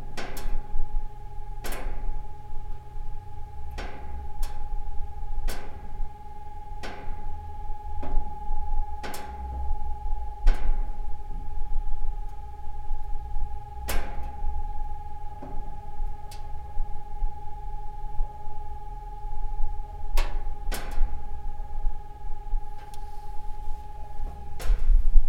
Test of sirens and karma, Smíchov
Favourite sound of test of siren, crackling of gas stove called karma and fidley in still very cold noon in February.
Prague, Czech Republic, February 2011